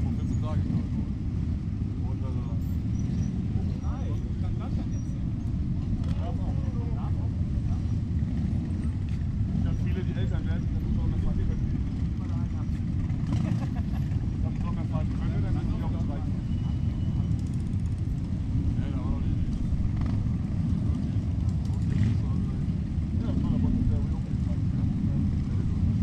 Satzvey, Bahnübergang, Rockertreffen
samstag, 5.7.2008, 14:20
treffen des rockerclubs Gremium MC in satzvey, strasse gesperrt, ca. 1500 motorräder, mitglieder des clubs unterhalten sich mit polizisten am bahnübergang, zug fährt vorbei.